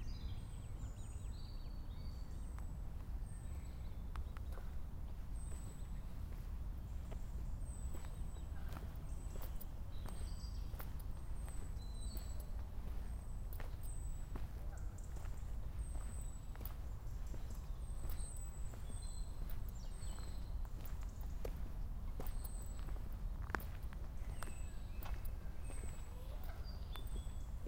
pond walk - Köln, pond walk
evening atmosphere, pond at "stadtwald" park, Cologne, may 29, 2008. - project: "hasenbrot - a private sound diary"